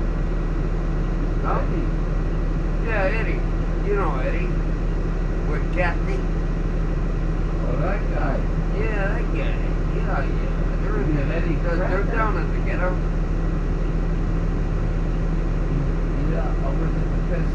Montreal: Monkland to Sherbrooke (bus #19) - Monkland to Sherbrooke (bus #19)
equipment used: Sansa e200 w/ Rockbox
Riding the 17 bus south from CDN to NDG with some drunks from Laval and a few crotchety Jamaican ladies
11 November, 17:00